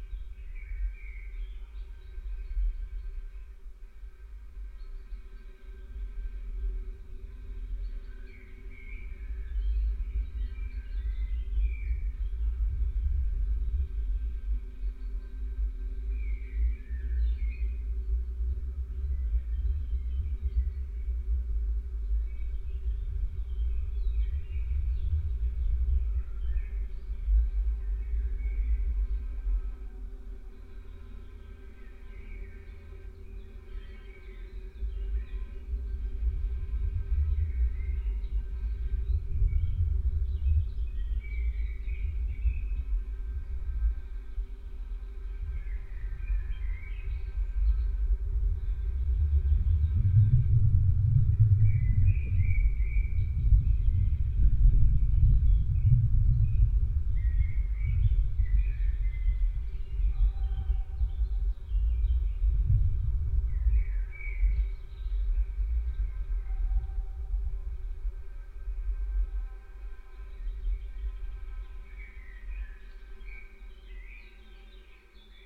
Kriaunos., Lithuania, observation tower
contact microphones on the metalic construction of 36 meters observation tower
5 June 2016, 15:15